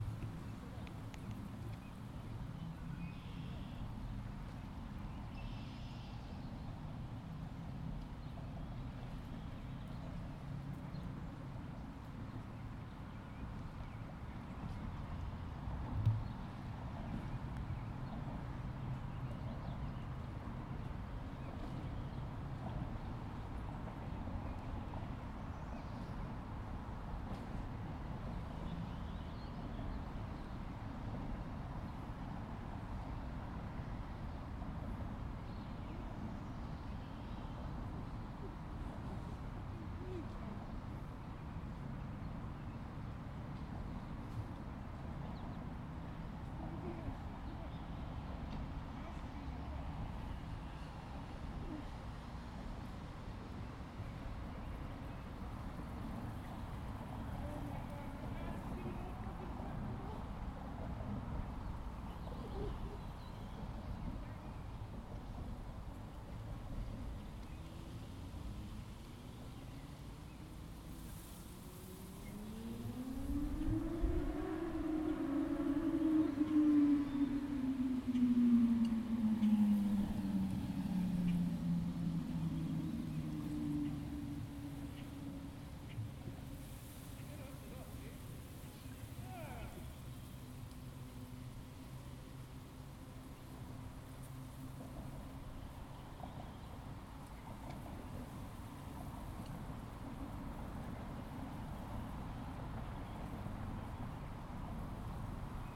Recorded right next to the river between the railway and the road.
Recorded on a Zoom H2N
Ontario, Canada, 7 June 2020, ~11:00